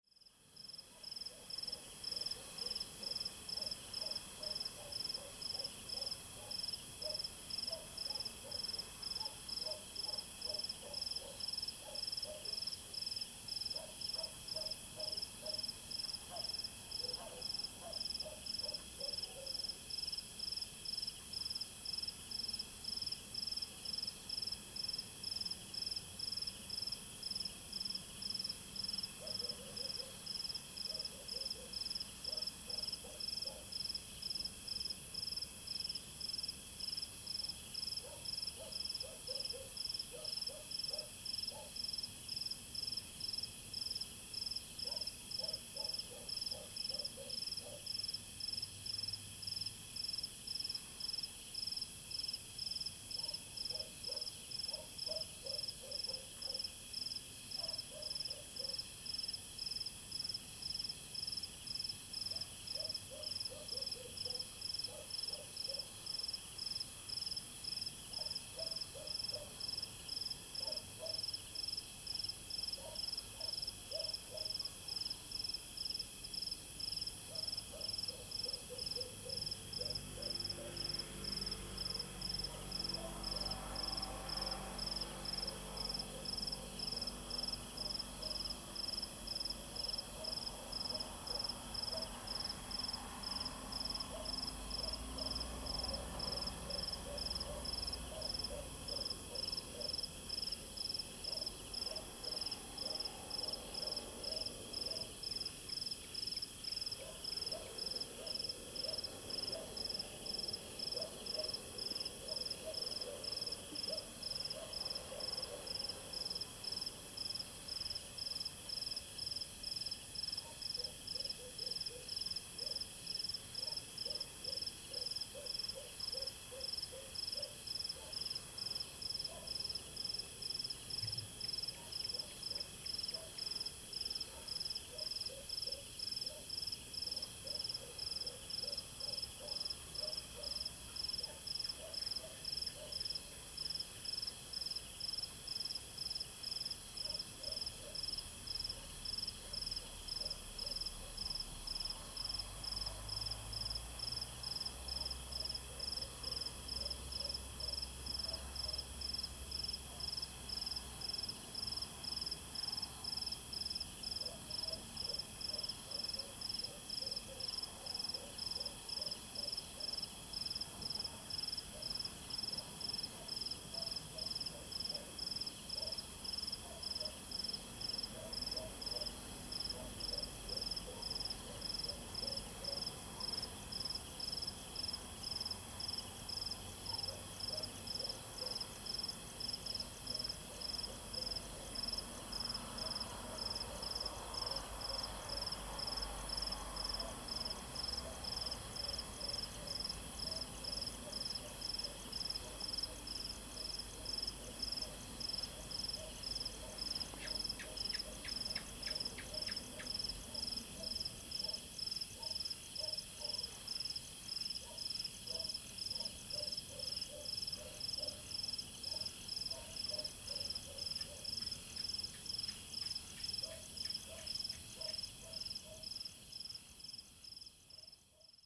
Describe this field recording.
Insect noise, Frog croak, Dog barking, Night school, gecko, Zoom H2n MS+XY